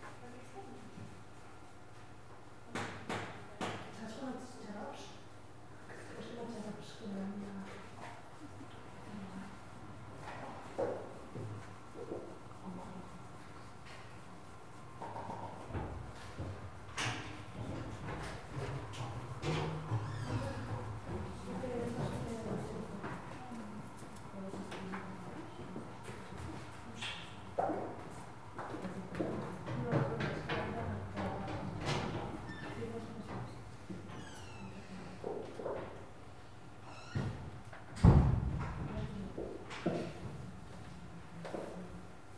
Constitució, La Bordeta, Barcelona, Barcelona, Spain - Study group in Constitució 19 library
I think this is a collectively organised library. Above us on a mezzanine were some kids that seemed to be meeting to do homework.
recorded on a Zoom H4n.